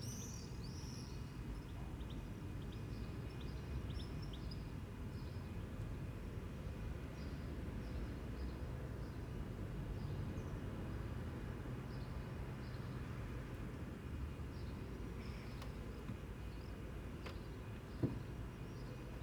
It is a hot blue sunny Sunday 27 degC. People relax in the heat, taking it easy in their gardens. Traffic is light. I am in the attic in a creaky chair. The skylight is wide open, grateful for the small breeze, as gangs of young swifts rocket past, super fast, very close, screaming across the tiles, through gaps between houses and then high into the air, wind rushing though their wing feathers. Am envious, it looks and sounds fantastic fun. Later a goldfinch sings a little from the old TV aerial and distant pigeons coo.